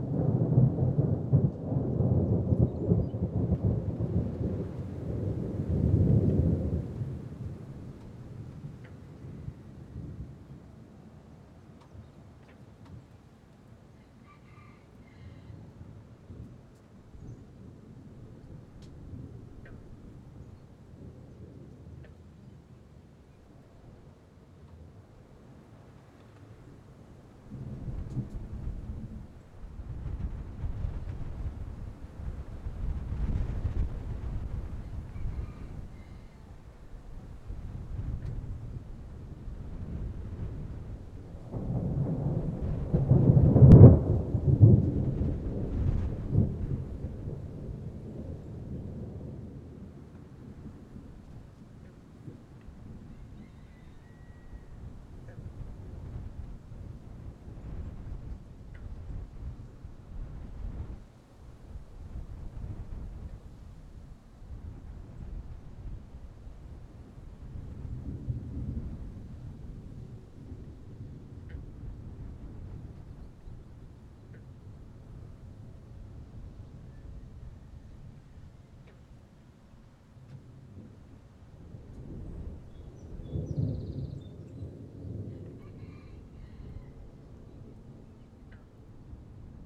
Whitehill, Nova Scotia, Canada
A summer thunder storm passes through rural Pictou County Nova Scotia.
Subd. B, NS, Canada